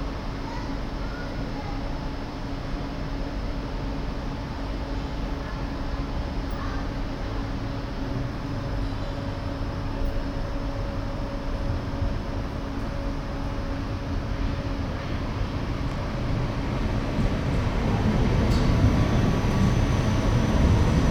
auf den bahnhofgleisen am frühen abend, eine zugansage
soundmap nrw:
social ambiences, topographic field recordings
bahnhof, gleis